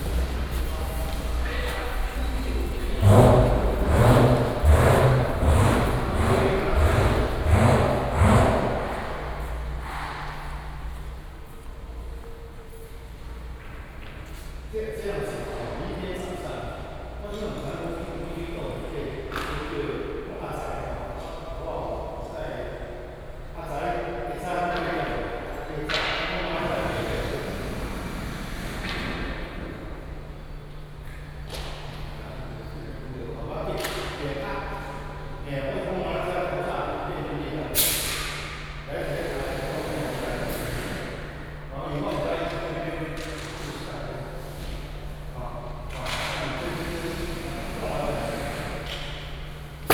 Fongshan, Kaohsiung - Da Dong Art Center
高雄市 (Kaohsiung City), 中華民國